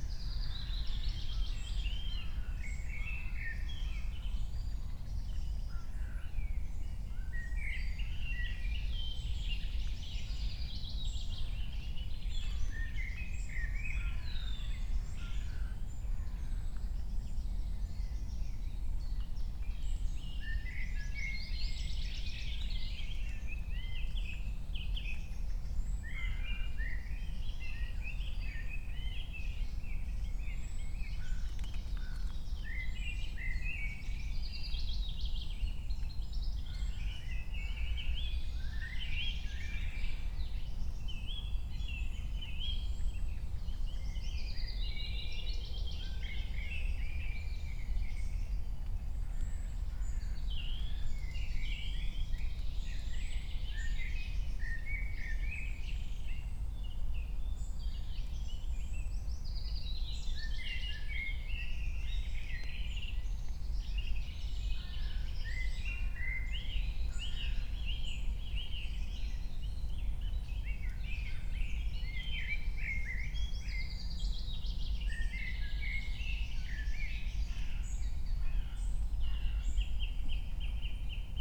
Königsheide, Berlin - forest ambience at the pond
7:00 drone, trains, frog, crows, more birds